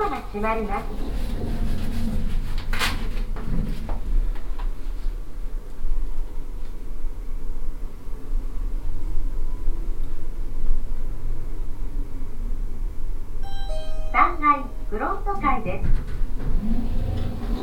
yokohama, hotel elevator
Inside the talking hotel elevator. Driving some floors up and down.
international city scapes - social ambiences and topographic field recordings
30 June 2011